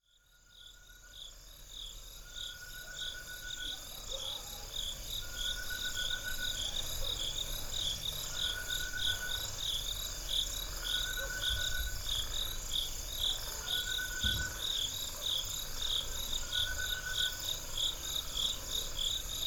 Chikuni Mission, Monze, Zambia - night sounds...
…night sounds near Chikuni Mission…
15 November 2012